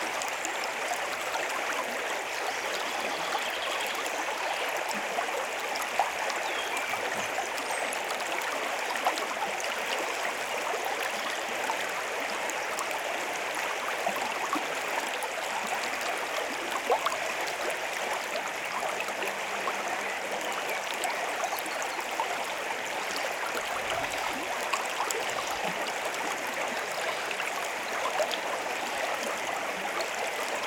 *Recording technique: ORTF
*The Soundscape: Individual bird voice in right channel, occasional car hums above, textures, tones and forms in riverflow.
The Ilm is a 128.7 kilometers (80.0 mi) long river in Thuringia, Germany. It is a left tributary of the Saale, into which it flows in Großheringen near Bad Kösen.
Towns along the Ilm are Ilmenau, Stadtilm, Kranichfeld, Bad Berka, Weimar, Apolda and Bad Sulza.
In the valley of Ilm river runs the federal motorway 87 from Ilmenau to Leipzig and two railways: the Thuringian Railway between Großheringen and Weimar and the Weimar–Kranichfeld railway. Part of the Nuremberg–Erfurt high-speed railway also runs through the upper part of the valley near Ilmenau.
*Recording and monitoring gear: Zoom F4 Field Recorder, RODE M5 MP, AKG K 240 MkII, Beyerdynamic DT 1990 PRO.